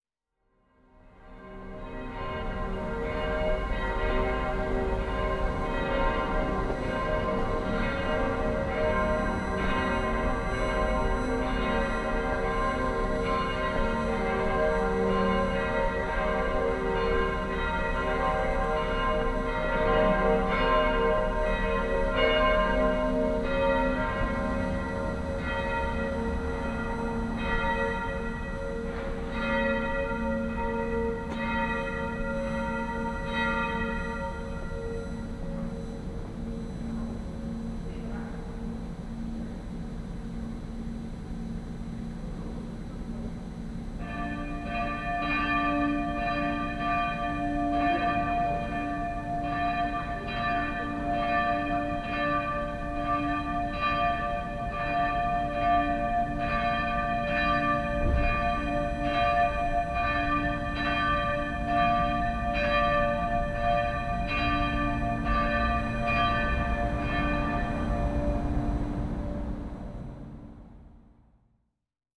Dlouhá, Praha, Czechia - (95 BI) Distant bells with RF interferences
Binaural recording of some distant bells on Sunday made from Dlouha street.
Recorded with Soundman OKM + Zoom H2n
Praha, Česko